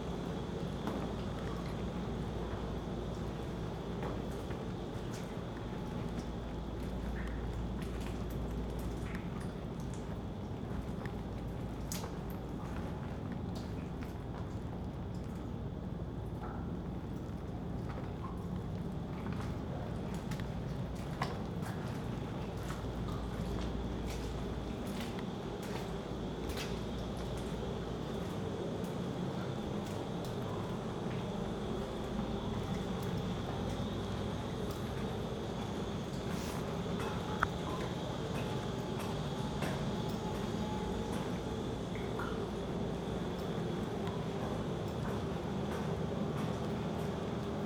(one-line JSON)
{"title": "Garzweiler, coal mining tipple", "date": "2011-10-03 14:30:00", "description": "Garzweiler, brown coal mining area", "latitude": "51.07", "longitude": "6.55", "altitude": "58", "timezone": "Europe/Berlin"}